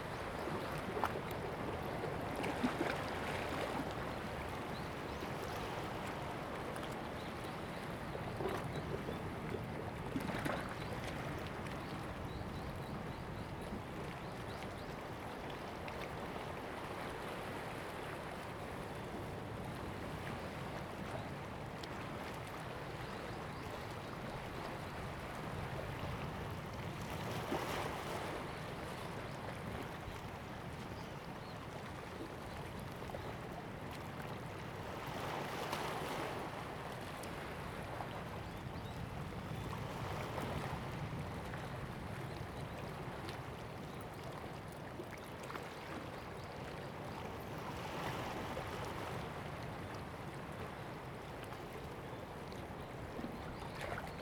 Tide, sound of the waves
Zoom H2n MS +XY